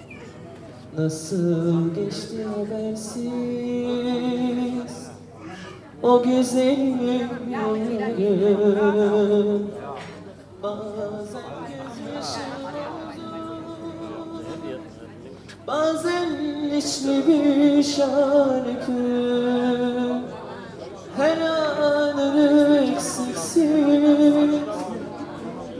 Neukölln, Berlin, Germany - Happy Birthday and other Turkish music, Loky Garten restaurant
9.30, a cold evening, not so many patrons, PA system with oddly inappropriate volume changes. Largish open air Turkish restaurant in an seemingly deserted factory area. I was attracted in by the singing, which reminded me of Istanbul and Turkish tea. Strange place, strange atmosphere. No baklava!